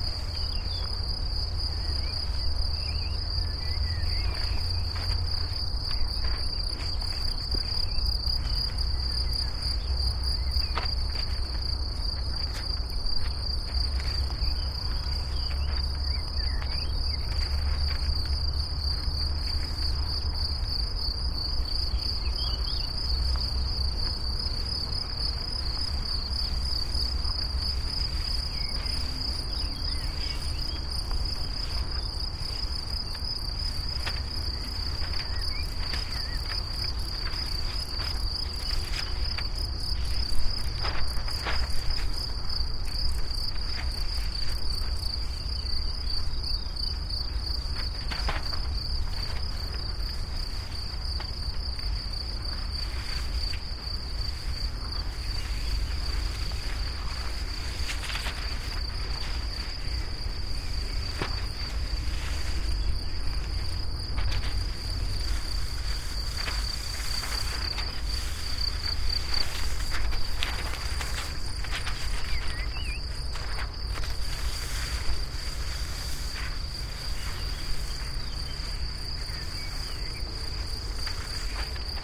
walk with two long strips of thin paper ... which are softly touching peaks of high grass in late may, crickets, birds, flies, butterflies, dragonflies, wind, distant traffic noise and much more ...